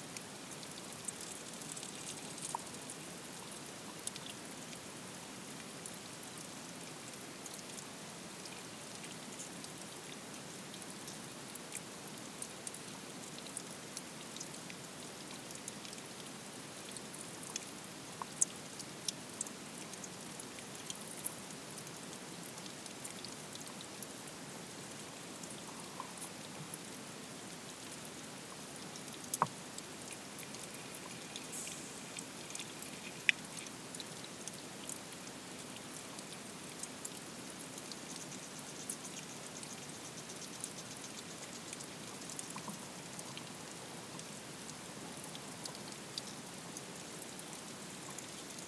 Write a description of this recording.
underwater activity in black contaiuner destined for cows to drink on the edge of the field, very hot afternoon. equip.: SD 722 + hydrophone CRT C55.